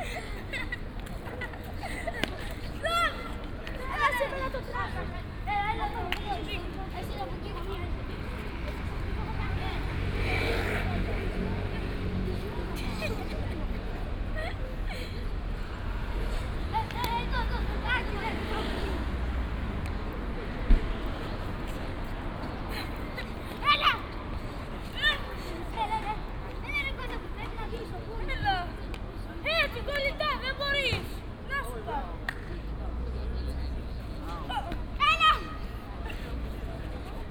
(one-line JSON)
{"title": "Athen, Victoria - kungfu kids fighting, square ambience", "date": "2016-04-06 22:35:00", "description": "evening at Victoria square, four kids playing martial arts fights and beat each other quite hard. waves of traffic, a tiny cyclist demonstration passing by.\n(Sony PCM D50, OKM2)", "latitude": "37.99", "longitude": "23.73", "altitude": "89", "timezone": "Europe/Athens"}